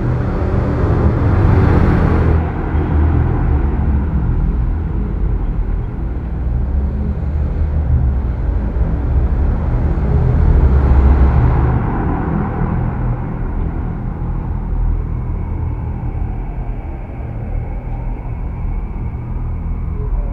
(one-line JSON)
{"date": "2011-11-04 15:40:00", "description": "Brussels, Rue de Lausanne in a tube, kind of 20 cm diameter from a construction site.", "latitude": "50.83", "longitude": "4.35", "altitude": "66", "timezone": "Europe/Brussels"}